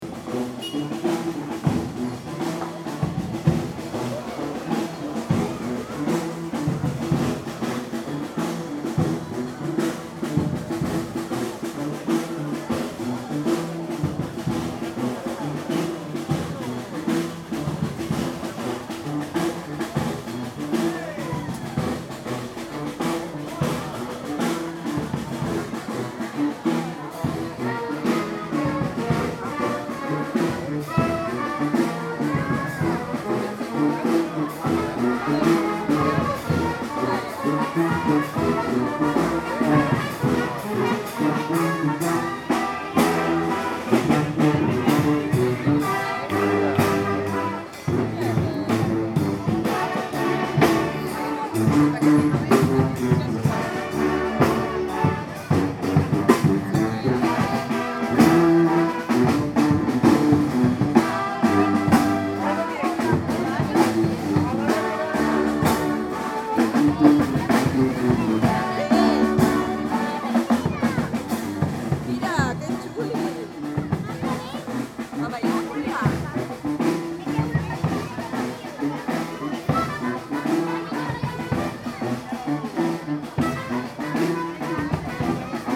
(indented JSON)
{
  "title": "Sevilla, Provinz Sevilla, Spanien - Sevilla, street parade",
  "date": "2016-10-09 13:40:00",
  "description": "At a street parade during Big Bang Festival Sevilla. The sound of the belgium street orchestra Hop Frog performing in the public space.\ninternational city sounds - topographic field recordings and social ambiences",
  "latitude": "37.40",
  "longitude": "-5.99",
  "altitude": "11",
  "timezone": "Europe/Madrid"
}